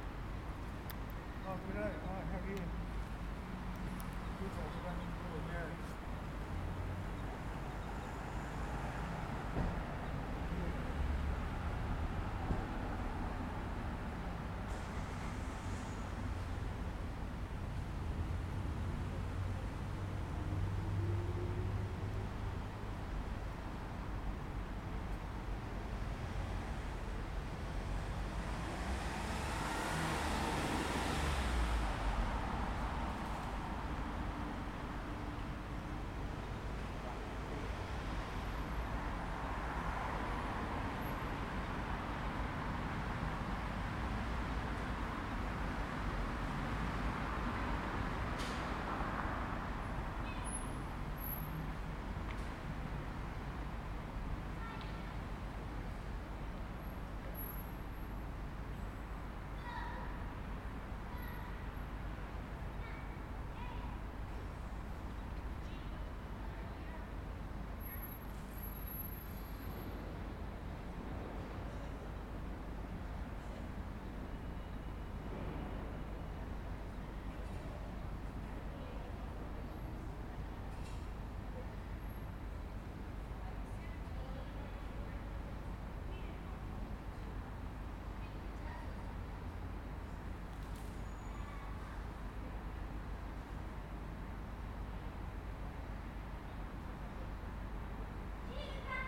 Unit 1410/149 Cope St, Waterloo NSW, Australia - Reggae
Recorded with Zoom pro mic, residents walking alone Cope St